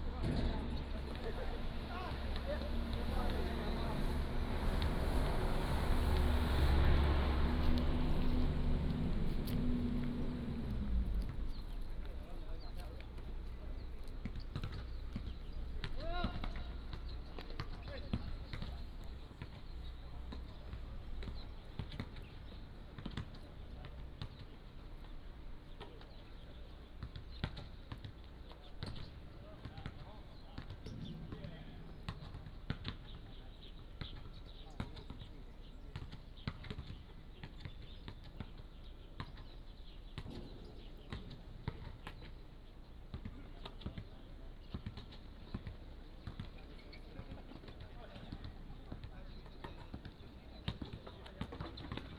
塘岐村, Beigan Township - In the playground
In the playground, Many soldiers are doing sports